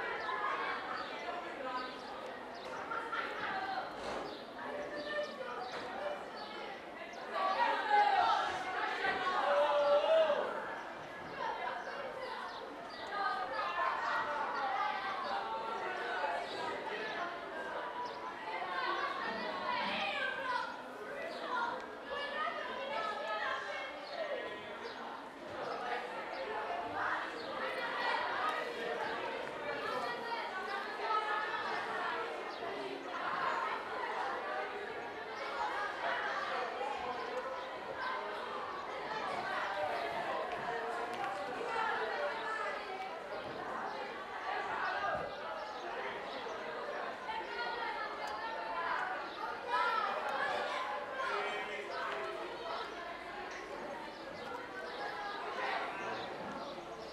L'Aquila, Scuola media Mazzini - 2017-05-22 05-Scuola Mazzini
L'Aquila AQ, Italy, 22 May 2017, 13:10